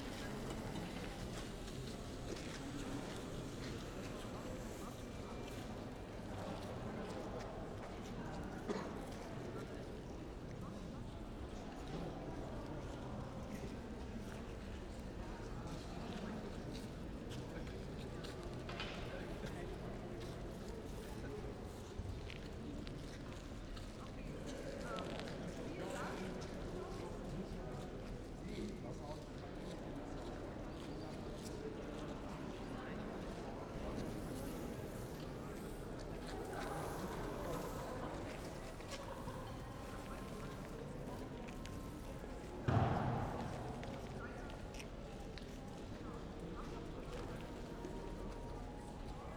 Humboldt-Forum, Schloßplatz, Berlin, Deutschland - room ambience, broom ballet rehearsing
members of the Besenballett (broom ballet), a sound performance project by artist katrinem, rehearsing in the hallway, entrance ambience before opening
(Sony PCM D50, Primo EM272)